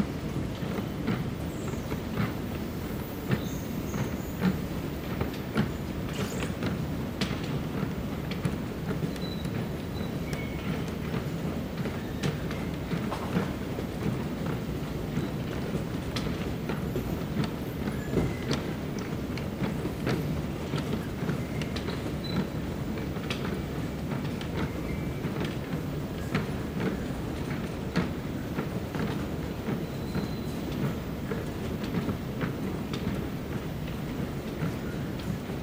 An empty baggage carousel, with a man standing nearby. I moved away once he started talking.